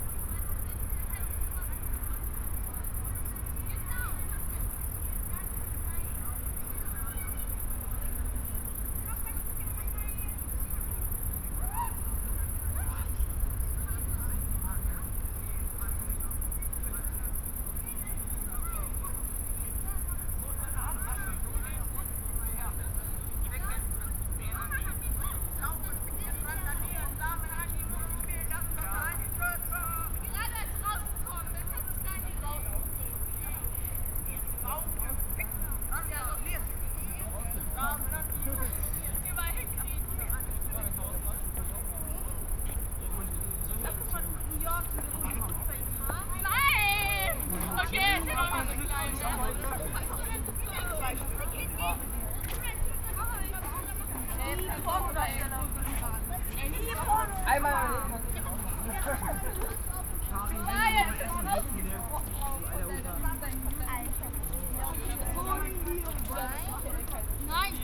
Riehl, Köln, Deutschland - Rhein meadows, riverside path
Köln, path alongside river Rhein, ambience, crickets, drone of ships, a group of youngsters from the nearby youth hostel
(Sony PCM D50, Primo EM172)